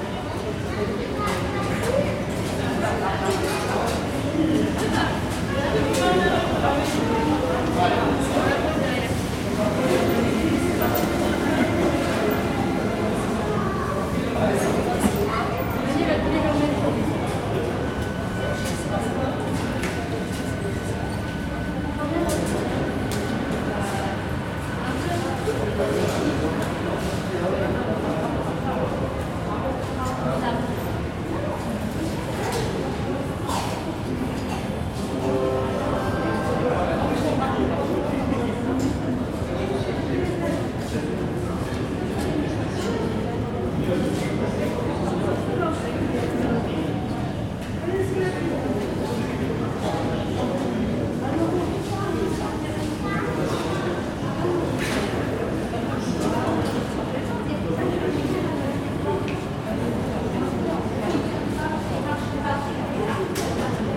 Chem. du Verdon, Toulouse, France - metro station
metro station
Captation : Zoom H4n
France métropolitaine, France, 19 December